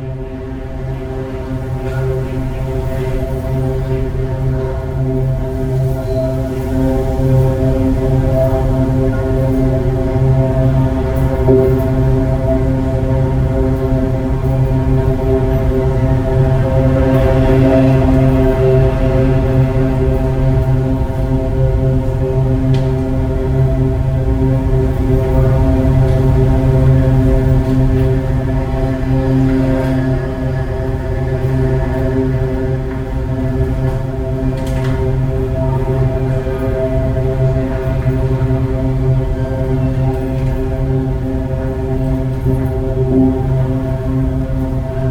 112台灣台北市北投區學園路1號國立臺北藝術大學圖書館 - the sound around the pond
the pipe in water (recorded in a part which above the water)
Taipei City, Taiwan, 19 October 2012, ~13:00